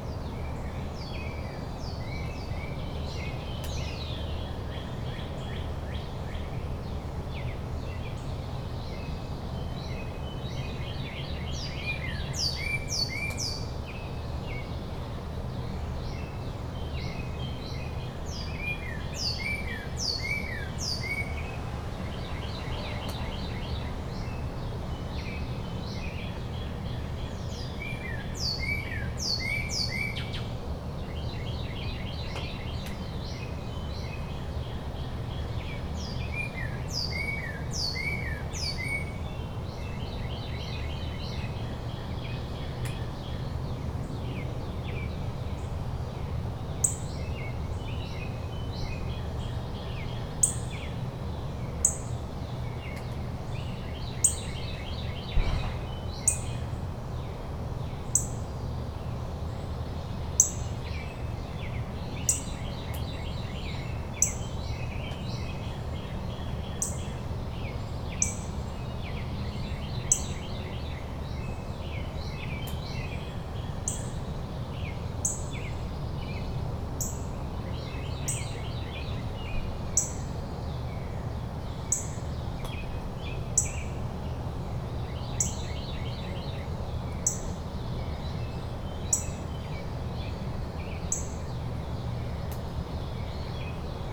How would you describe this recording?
The birds in this area are particularly active from around 4:30 onward. I'm not normally up at this time, but I had a very late night so I decided to go out and record them. I don't think I ever realized just how loud the birds were in the early morning hours before I took this recording. The recording was made with a Tascam DR-100 Mkiii and a custom wind reduction system.